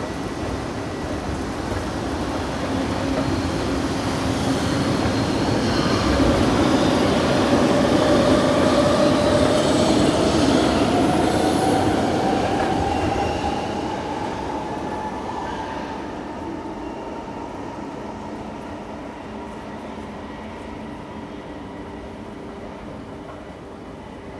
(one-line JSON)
{"title": "Moving Stairway, Metro Station Jacques Brel, Anderlecht, Belgium - Metro-Station Jacques Brel", "date": "2016-10-15 16:00:00", "description": "Moving Stairway and Ambiance of the Metro-Station Jacques Brel in 1070, Anderlecht/Belgium.", "latitude": "50.85", "longitude": "4.32", "altitude": "37", "timezone": "Europe/Brussels"}